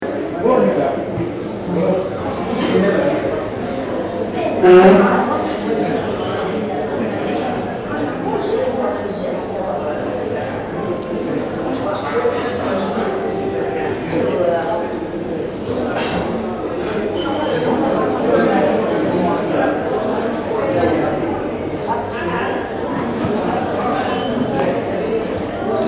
Ville Nouvelle, Tunis, Tunesien - tunis, hotel africa, foyer
Inside the foyer of a hotel. The sound of people entering and leaving the hall, luggage being moved as well as telephones and keys.
international city scapes - social ambiences and topographic field recordings
5 May 2012, 10:00